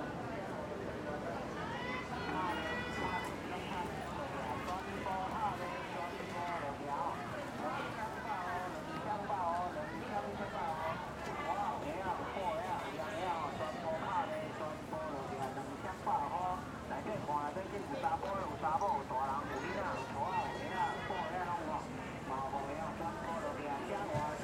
林口老街市場 - 菜販叫賣聲
臺灣